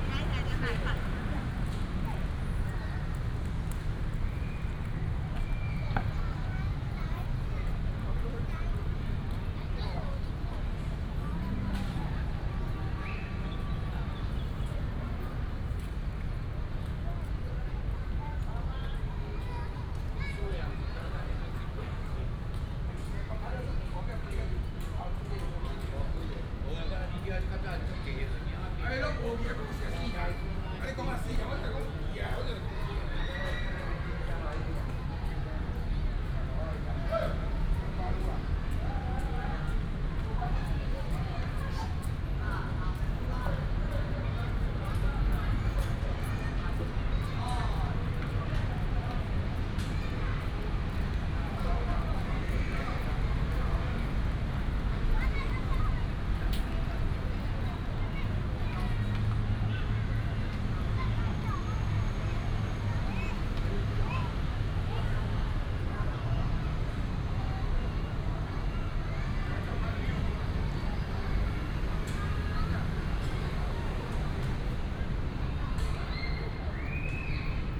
Jieshou Park, Banqiao Dist., New Taipei City - walking in the Park
Walking through the park, sound of the birds, traffic sound, Child